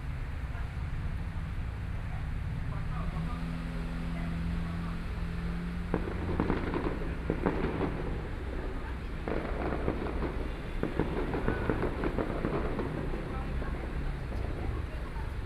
Zhuwei, New Taipei City - Park entrance
Traffic Noise, Far from being applied fireworks, MRT trains through, Binaural recordings, Sony PCM D50 + Soundman OKM II
New Taipei City, Taiwan